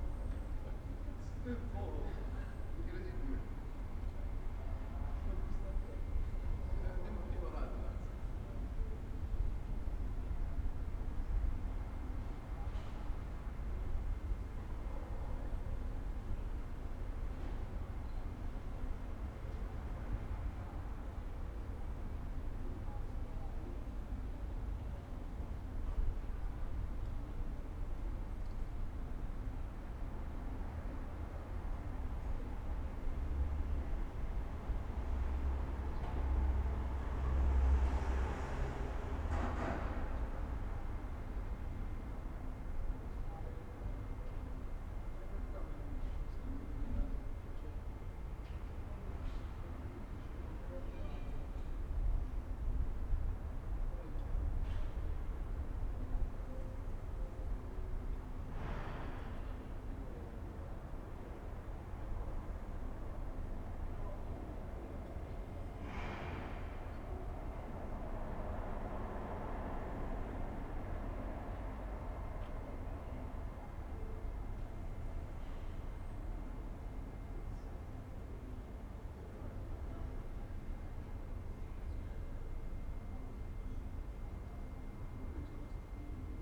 "Saturday afternoon without laughing students but with howling dog in the time of COVID19" Soundscape
Chapter XCIX of Ascolto il tuo cuore, città. I listen to your heart, city
Saturday, June 6th 2020. Fixed position on an internal terrace at San Salvario district Turin, eighty-eight days after (but day thirty-four of Phase II and day twenty-one of Phase IIB and day fifteen of Phase IIC) of emergency disposition due to the epidemic of COVID19.
Start at 4:43 p.m. end at 5:26 p.m. duration of recording 43’22”
Piemonte, Italia, 6 June 2020, ~17:00